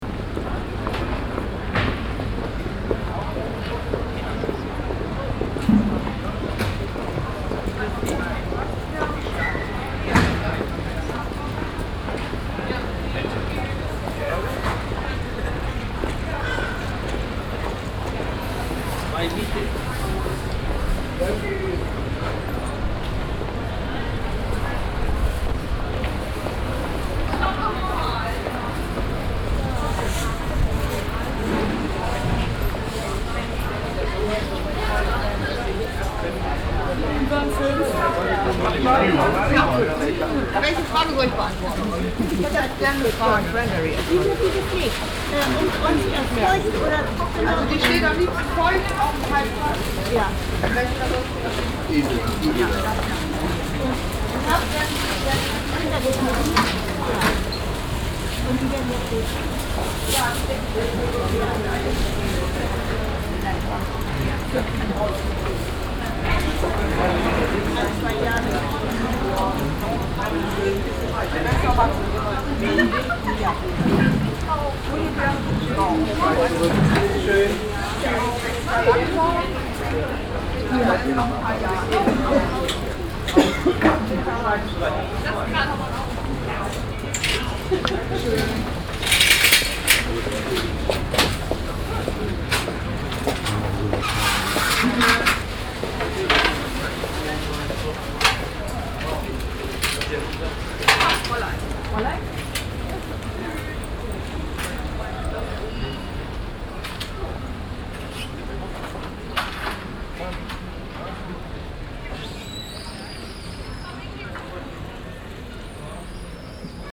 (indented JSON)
{
  "title": "Altstadt, Bremen, Deutschland - bremen, unter lieben frauen kirchhof, flower market",
  "date": "2012-06-13 14:45:00",
  "description": "At the small old cobble stone square during the regualar flower market. The sound of steps and conversations with the traders under small tents.\nsoundmap d - social ambiences and topographic field recordings",
  "latitude": "53.08",
  "longitude": "8.81",
  "altitude": "20",
  "timezone": "Europe/Berlin"
}